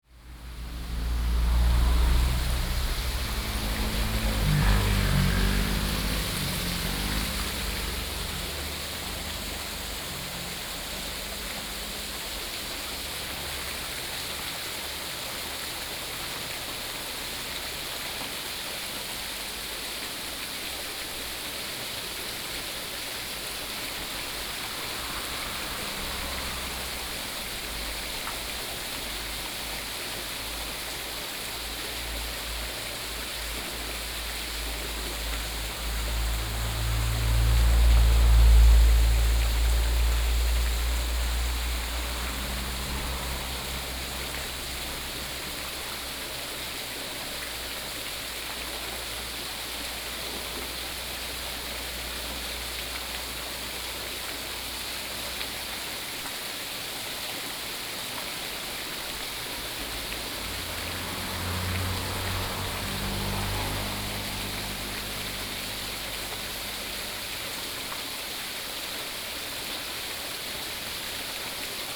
三峽區北114鄉道, New Taipei City - Mountain waterway
Mountain waterway, traffic sound
14 August 2017, ~11:00